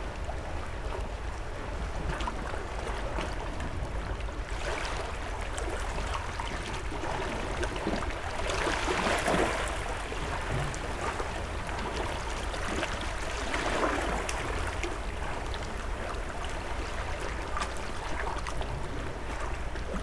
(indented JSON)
{
  "title": "Triq Għajn Tuta, Il-Mellieħa, Malta - water off the trail",
  "date": "2020-09-24 13:22:00",
  "description": "water splashing in the small, rocky dock. it's a hidden dock, some kind of small ship facility hidden in the rock cliff. seemed abandoned. a concrete platform, 3 or four buildings, sort of warehouse type, in complete ruin. place covered in various trash. substantial amount of trash also in the water. every splash moves about a bed of plastic in all forms and shapes. (roland r-07)",
  "latitude": "35.98",
  "longitude": "14.33",
  "altitude": "5",
  "timezone": "Europe/Malta"
}